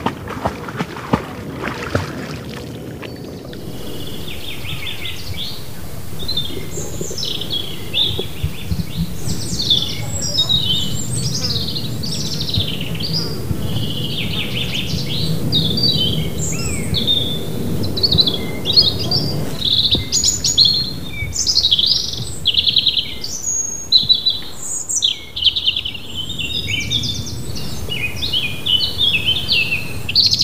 Forêt Domaniale de Carnelle, France - un chien se baigne dans le lac, les oiseaux entendus dans la forêt
Zoom H4n + rode NTG-2